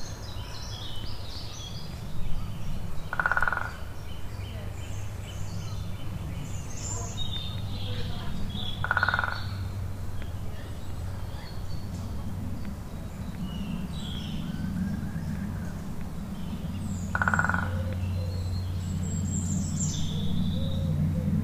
Woodpecker, Plane, Train - Ccpperas Bay